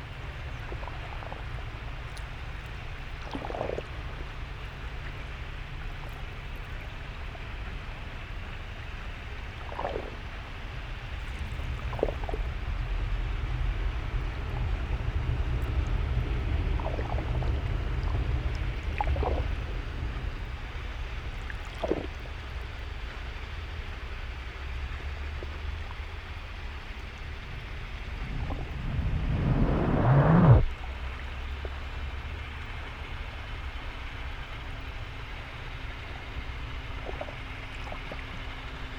Kanaleneiland, Utrecht, The Netherlands - hydro ARK/MWK
hydrophones and stereo mic
June 2014